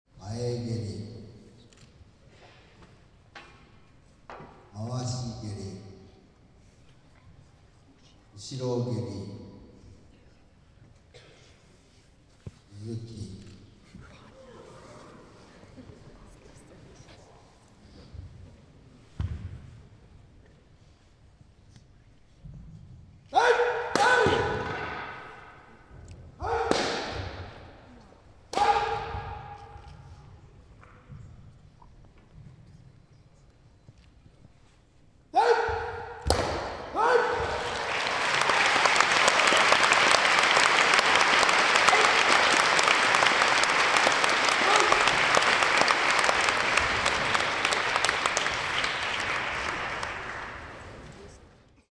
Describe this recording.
Surround audio. Zoom H2. Use Fraunhofer mp3s-player/plug-in for full surround playback: